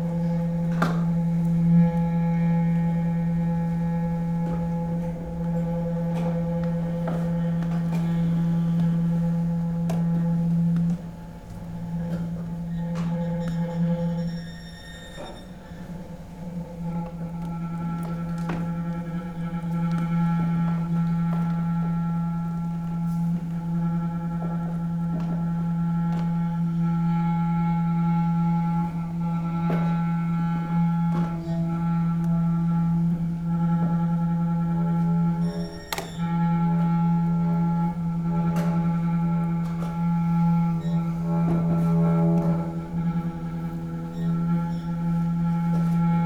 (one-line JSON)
{"title": "Tallinn, Lai, Hobuveski theater - chello performance", "date": "2011-07-07 21:20:00", "description": "intense and silent chello performance by Charles Curtis. clicking and crackling chairs, tension.", "latitude": "59.44", "longitude": "24.75", "altitude": "26", "timezone": "Europe/Tallinn"}